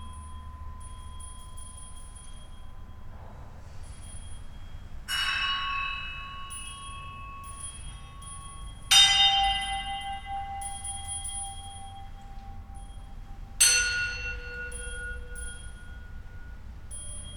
Site-specific performance in the tunnels below this square, made at the end of the 'Urban Sound Ecology' workshop organized by the Muzeum Sztuki of Lodz Poland
Łódź, Poland, 10 April 2012